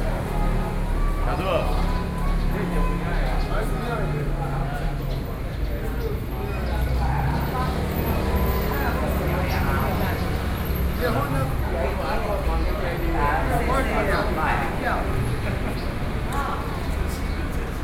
萬華, Taipei City, Taiwan - erhu